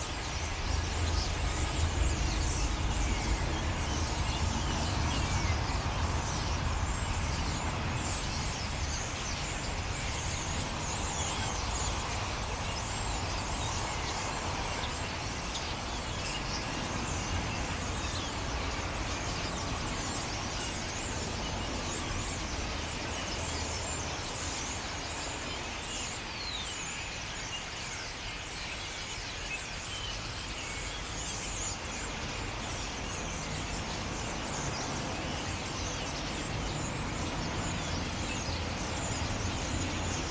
Berlin Dresdener Str, Waldemarstr. - starlings on construction cranes
starlings on construction cranes. a bunch of new appartment houses have been built here recently. former berlin wall area, they call it now engelshöfe.